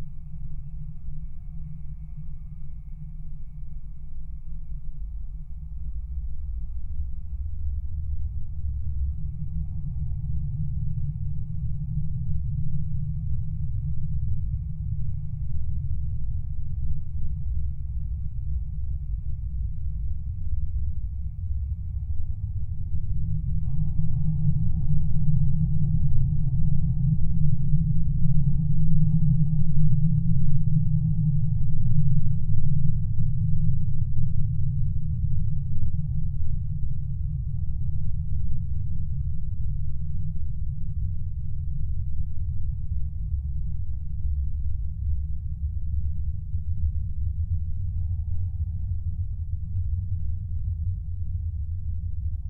temporary sonic intrusion into into the cityscape. some new building surrounded by metallic fence. lowest drone recorded with LOM geophone.
Utenos apskritis, Lietuva, 6 February